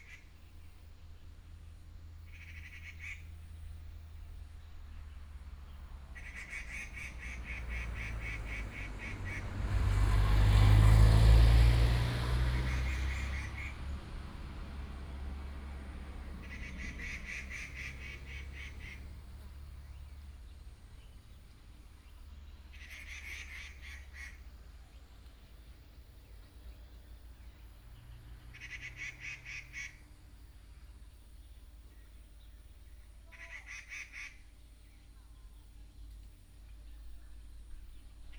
頭屋鄉北岸道路, Miaoli County - Birds call
Near the reservoir, sound of the plane, Birds sound, Binaural recordings, Sony PCM D100+ Soundman OKM II